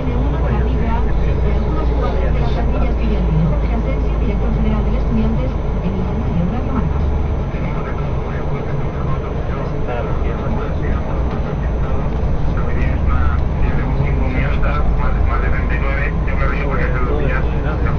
Leioa (basque country)
2009/10/14. 17:18h. Returning from the job in the university. The sound in the bus with another workers and the fucking stupid radio station. No students as you realize.